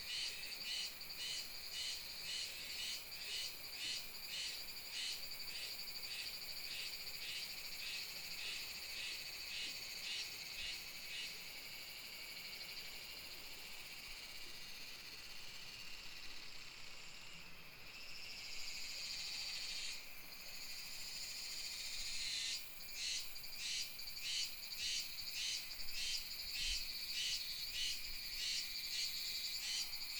水簾洞步道, Emei Township, Hsinchu County - Insect beeps
Insect beeps, Cicadas sound, r, Sound of water, Binaural recordings, Sony PCM D100+ Soundman OKM II
1 November, ~12:00